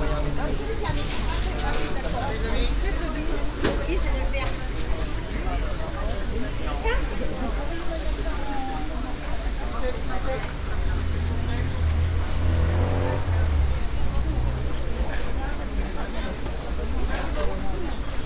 Place La Fayette, Angers, France - (595) Marché La Fayette
Binaural recording of Marché La Fayette.
recorded with Soundman OKM + Sony D100
sound posted by Katarzyna Trzeciak